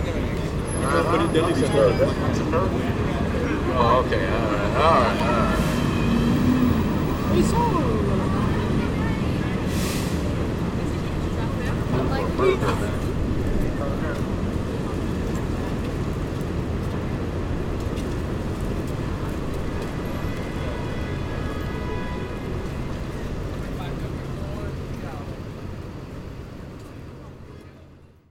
Broadway, New York, NY, USA - Discounts to Madame Tussauds
Street announcer selling discount tickets to Madame Tussauds Wax museum.
"Nothing else matters in this city. Stop walking aimlessly."
"Better than living in New Jersey, I can tell you that much."